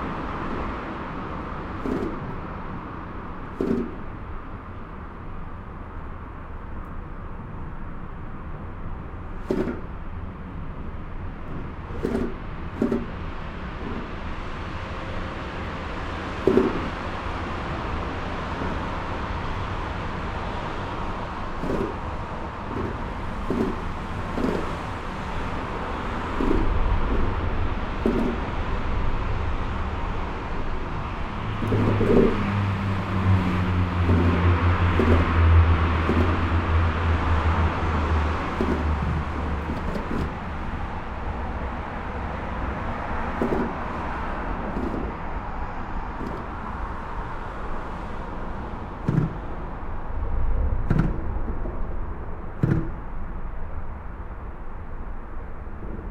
Machelen, Belgium - Vilvoorde viaduct
Below the Vilvoorde viaduct. Sound of the traffic. It's not the most beautiful place of brussels, its quite aggressive and hideous.